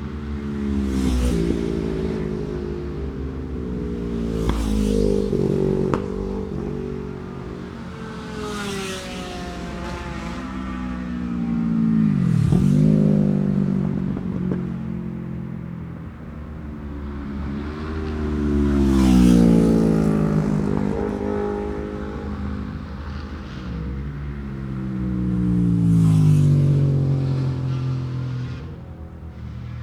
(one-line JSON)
{"title": "Scarborough UK - Scarborough Road Races 2017 ... lightweights ...", "date": "2017-06-24 10:00:00", "description": "Cock o' the North Road Races ... Oliver's Mount ... Super lightweight practice ...", "latitude": "54.27", "longitude": "-0.40", "altitude": "142", "timezone": "Europe/London"}